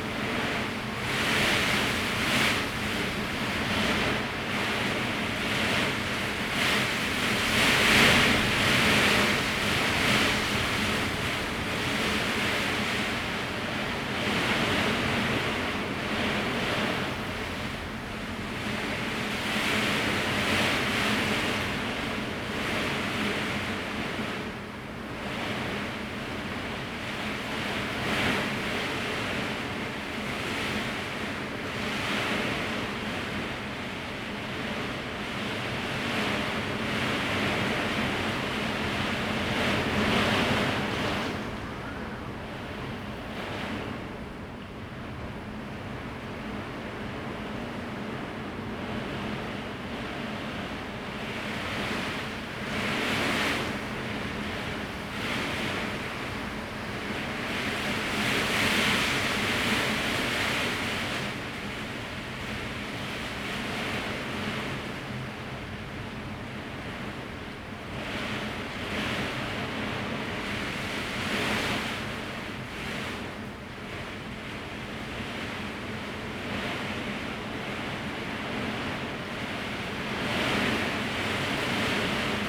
Daren St., Tamsui Dist., New Taipei City - strong wind and rain
typhoon, Gradually become strong wind and rain
Zoom H2n MS+XY